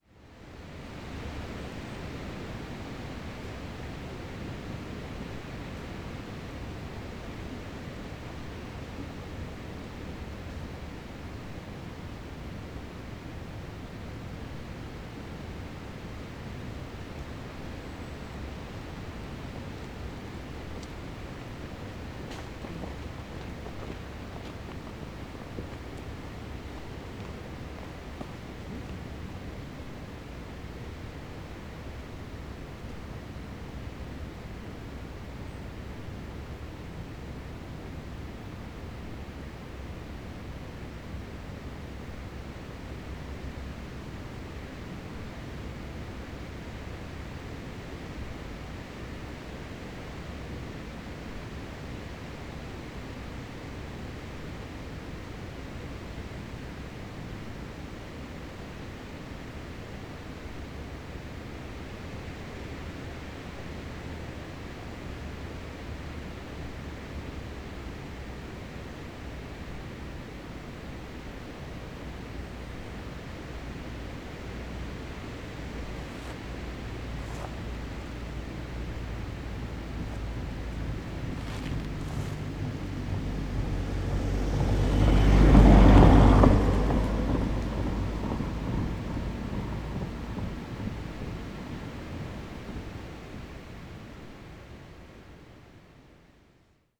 alt reddevitz: geodätischer festpunkt - the city, the country & me: geodetic survey marker
stormy afternoon, wind blowing through the trees, hikers and a car passing by
the city, the country & me: october 3, 2010
Middelhagen, Germany, 3 October 2010